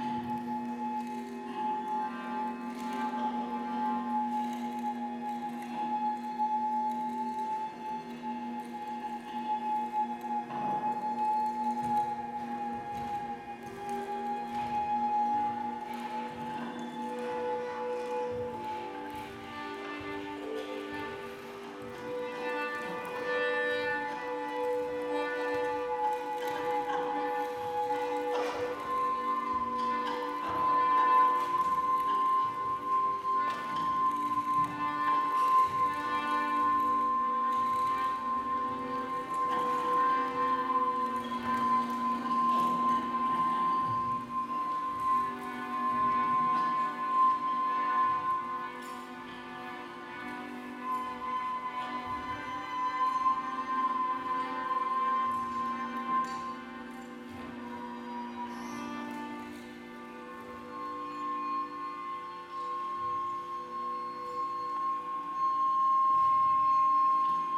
military bunker improvisation, Riga Latvia
underground military bunker improsiation, Riga Latvia
27 June 2008, ~00:00